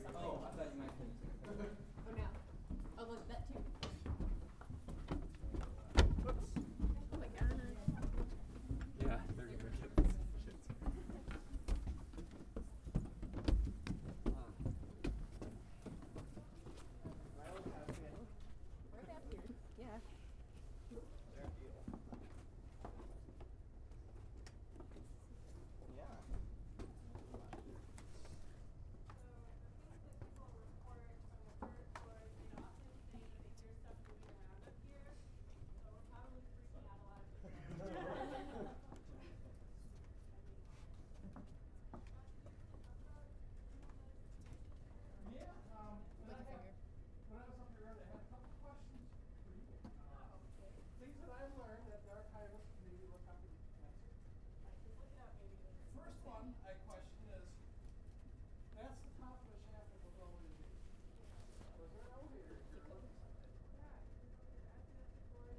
Appleton, WI, USA - Ormsby Stairs and Attic
Walking up the stairs to the Ormsby attic. Notice how dead the space is, on account of all of the wood–you can hardly hear the speakers even though the space was not large.
22 October 2015